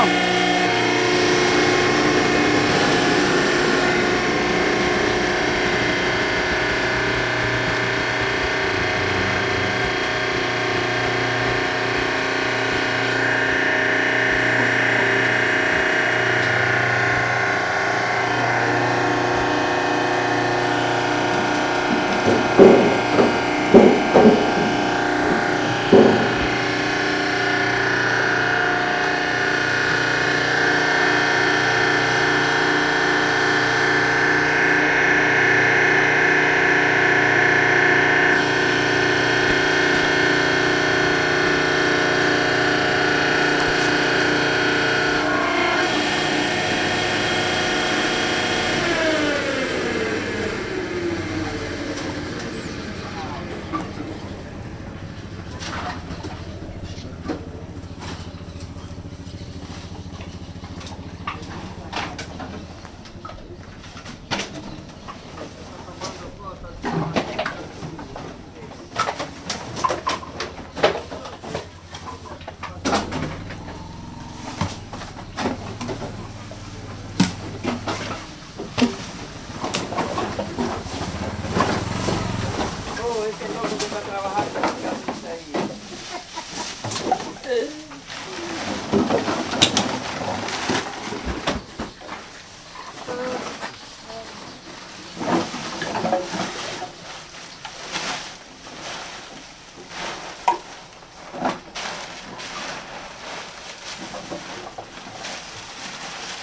Parque Santander., Cra., Mompós, Bolívar, Colombia - Bodega de reciclaje
En la bodega de reciclaje de Mompox, una máquina compacta el material que traen reciclados del pueblo y de poblaciones cercanas.